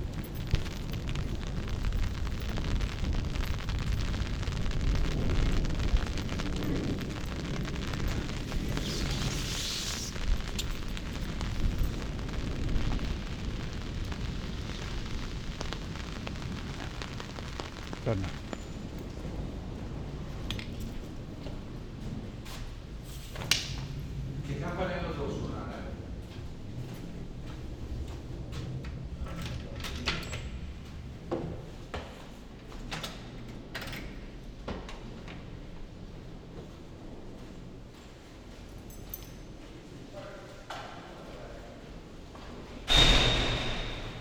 "I’m walking in the rain, Monday again, in the time of COVID19" Soundwalk
Chapter CI of Ascolto il tuo cuore, città. I listen to your heart, city
Monday, June 8th 2020. San Salvario district Turin, walking to Corso Vittorio Emanuele II and back, ninety days after (but day thirty-six of Phase II and day twenty-three of Phase IIB and day seventeen of Phase IIC) of emergency disposition due to the epidemic of COVID19.
Start at 3:50 p.m. end at 4:09 p.m. duration of recording 19’11”
As binaural recording is suggested headphones listening.
The entire path is associated with a synchronized GPS track recorded in the (kmz, kml, gpx) files downloadable here:
go to Chapter LI, Monday April 20th 2020
Torino, Piemonte, Italia, 8 June 2020, 15:50